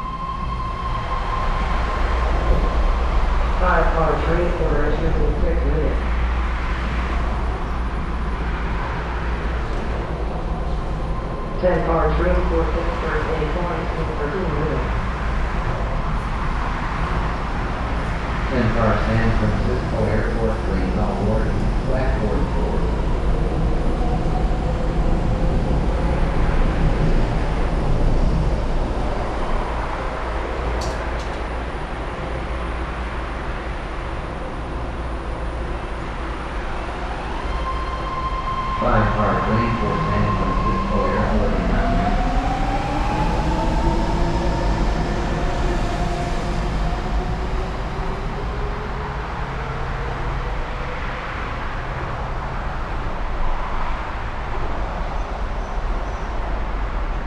MacArthur BART, Oakland, CA, USA - MacArthur BART Station
Recorded with a pair of DPA 4060s and a Marantz PMD661
13 January, 13:00